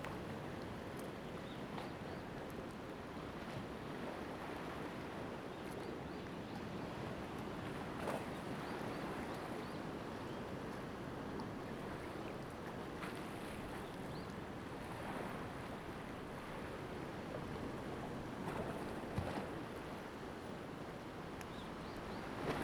公館漁港, Lüdao Township - In the fishing port
In the fishing port, Waves
Zoom H2n MS+XY